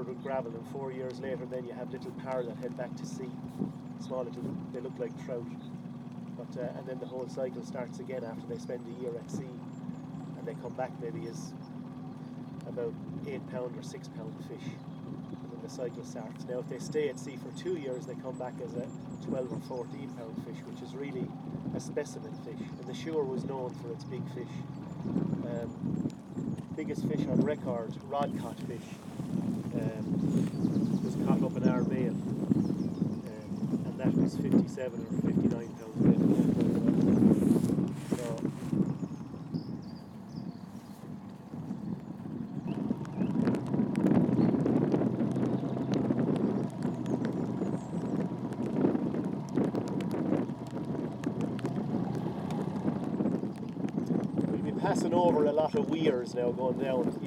Ballynaraha, Co. Tipperary, Ireland - Ralph Boat Trip
Sounding Lines
by artists Claire Halpin and Maree Hensey